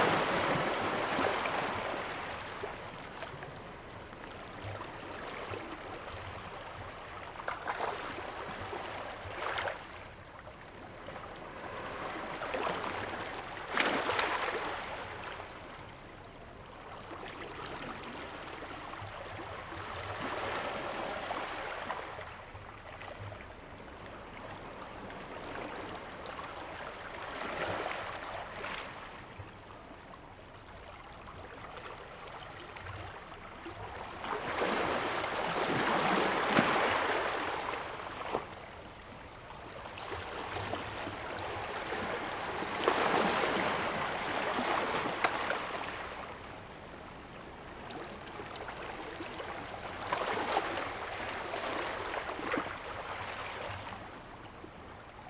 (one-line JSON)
{"title": "石雨傘漁港, Chenggong Township - Small fishing port", "date": "2014-09-08 11:09:00", "description": "sound of the tide, Small fishing port, Birdsong, Sound of the waves\nZoom H2n MS +XY", "latitude": "23.18", "longitude": "121.40", "altitude": "5", "timezone": "Asia/Taipei"}